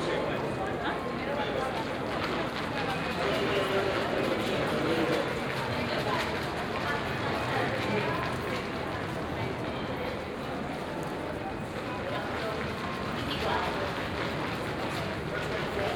Rome, Colosseum - ticket counters
long line at the ticket counters. assistants talking to visitors via small lo-fi speakers.
Rome, Italy, 1 September 2014, ~16:00